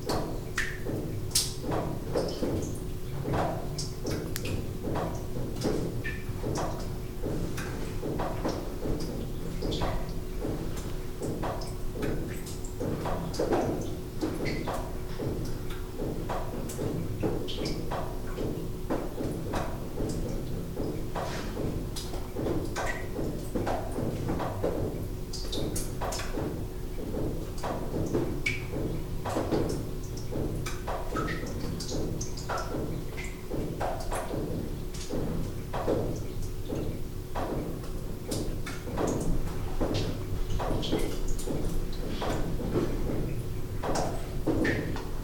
North Korean Infiltration Tunnel #4
A fourth infiltration tunnel was discovered on 3 March 1990, north of Haean town in the former Punchbowl battlefield. The tunnel's dimensions are 2 by 2 m (7 by 7 feet), and it is 145 metres (476 ft) deep.
1. Water dripping within the tunnel 2. Narrow gauge railway cart inside the tunnel 3. Propaganda broadcast heard braodcasting from North Korea into the Punchbowl Valley